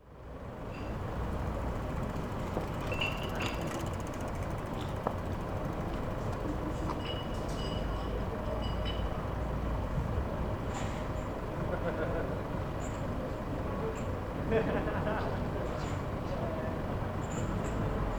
Berlin: Vermessungspunkt Friedelstraße / Maybachufer - Klangvermessung Kreuzkölln ::: 29.05.2011 ::: 00:03
29 May 2011, ~00:00